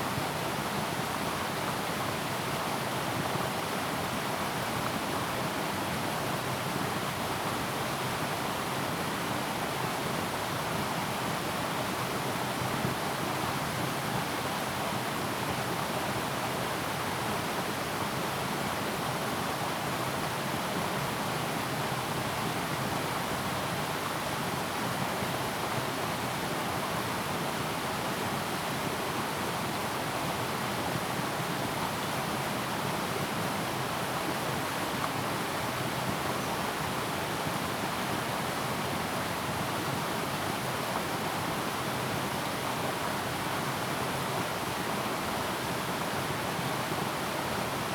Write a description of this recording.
Faced with streams, Zoom H2n MS+ XY